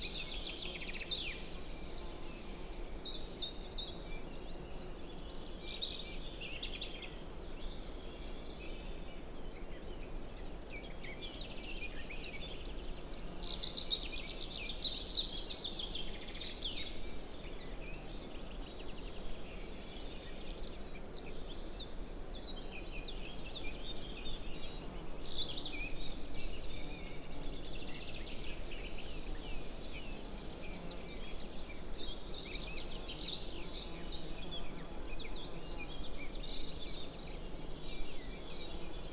Unnamed Road, Slovakia - Mountain Meadow in High Tatras
Mountain meadow in summer. Surrounded by coniferous forest, altitude approx. 1400 meters.